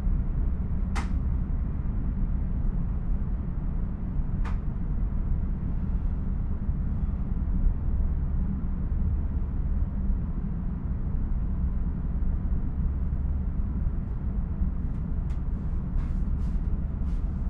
Quiet Train in Suffolk, UK
Another experiment with long recordings. This one is a local train ride in real time through sleepy Suffolk from Woodbridge to Saxmundham. There are voices, announcements and train sounds ending with passengers leaving the train and suitcases being trundled along the pavement in Saxmundham.
Recorded with a MixPre 6 II and two Sennheiser MKH 8020s in a rucksack.
England, United Kingdom, February 2022